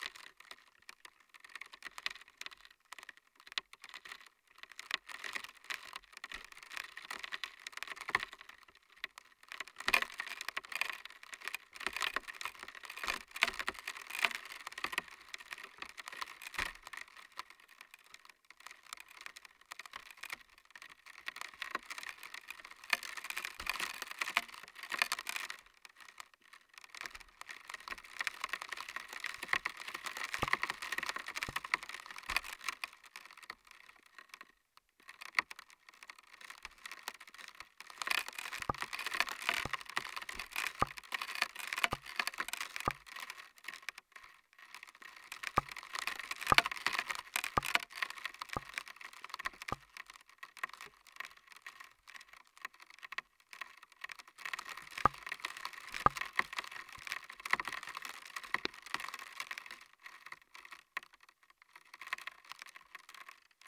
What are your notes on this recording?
The first ice on the shore of the lake. Its about 1-2 milimetres thin and acts as good membrane. Ive placed contact microphones on it to record subtle movements in water.